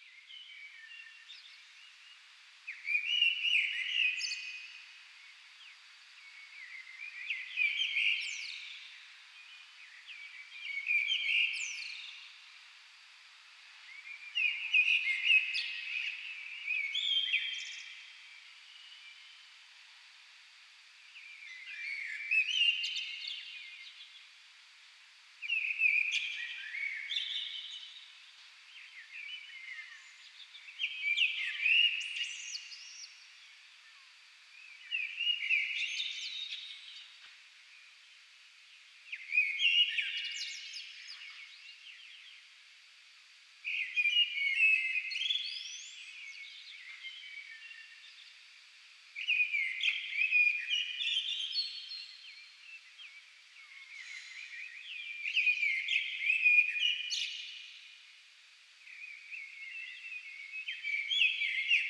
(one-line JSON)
{"title": "Barcelona, España - (...) Dawn at home", "date": "2012-06-10 06:00:00", "description": "Dawn at home.\nRecorder: AETA - MIXY\nMicrophones: Primo EM172\n| Mikel R. Nieto | 2012", "latitude": "41.41", "longitude": "2.16", "altitude": "87", "timezone": "Europe/Madrid"}